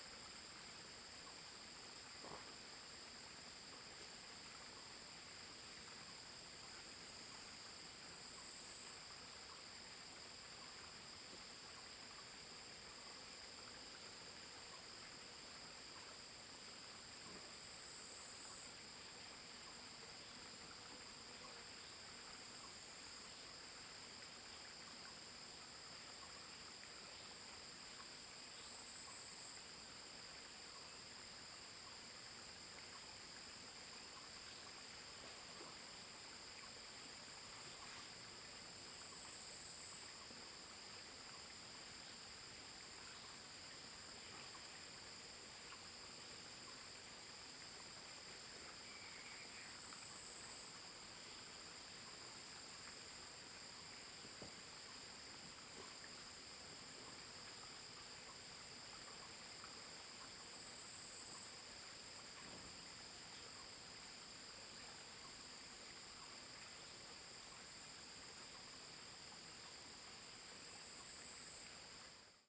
永興路後段橋前, Puli, Taiwan - Evacuation preparations
Take refuge。
Zoon H2n (XY+MZ) (2015/08/30 002), CHEN, SHENG-WEN, 陳聖文
Nantou County, Taiwan, 30 August 2015, 14:30